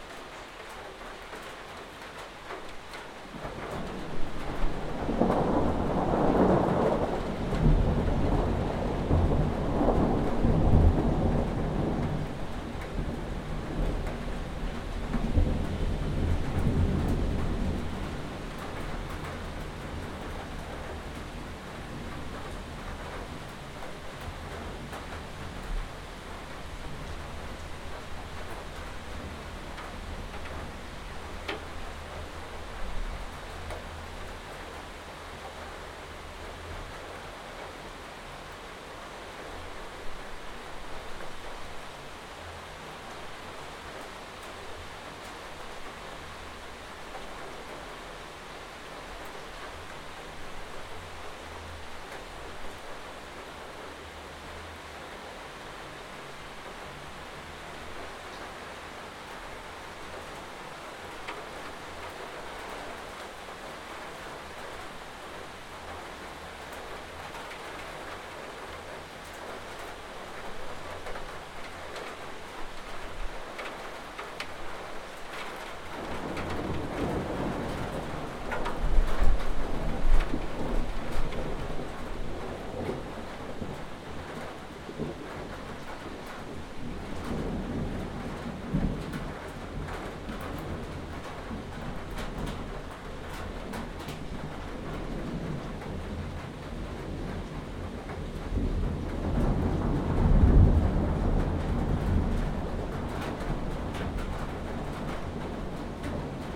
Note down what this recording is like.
Thunderstorm in Moscow, recorded from the windowsill of a flat at the 8th floor